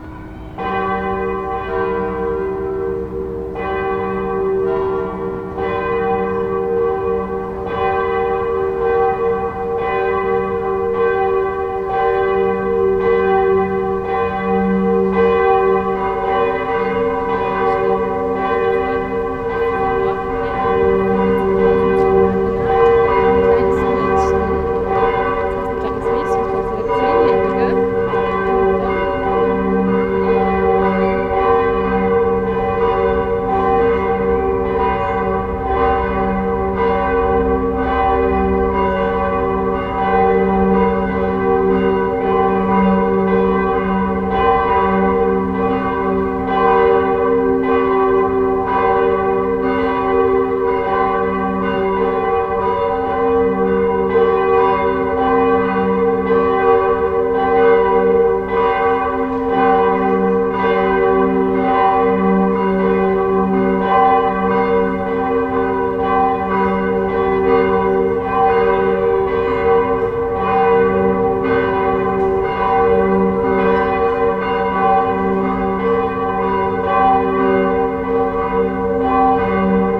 berlin: nansenstraße/reuterplatz - the city, the country & me: bells of nicodemus church and saint christopher church
recorded at a central position between nicodemus church and saint christopher church, nicodemus starts and finishes the ringing session
World Listening Day (WLD) 2011
the city, the country & me: july 18, 2011
Berlin, Germany, 18 July 2011, 18:05